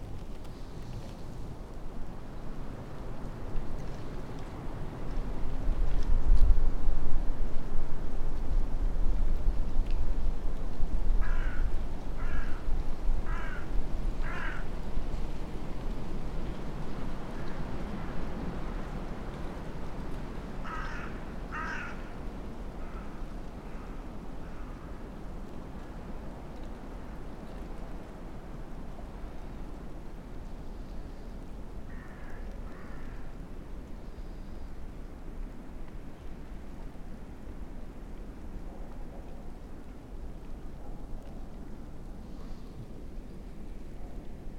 dale, Piramida, Slovenia - winds
winds above and around
Vzhodna Slovenija, Slovenija, March 2013